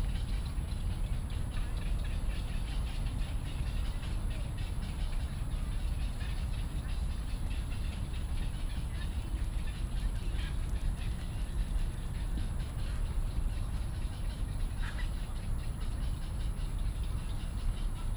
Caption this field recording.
The Ecological Pool in the centre of Daan Forest Park, in the Park, Bird calls, Doing clapping motion, Environmental Traffic Sound, Hot weather